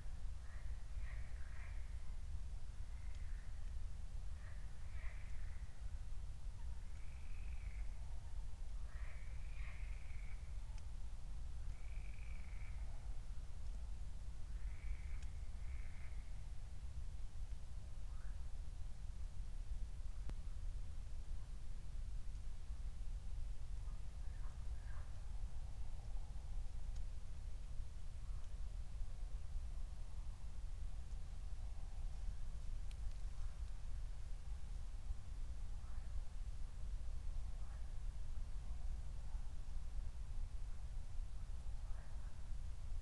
Berlin, Buch, Mittelbruch / Torfstich - wetland, nature reserve
23:00 Berlin, Buch, Mittelbruch / Torfstich 1
Deutschland, 18 June 2020, 23:00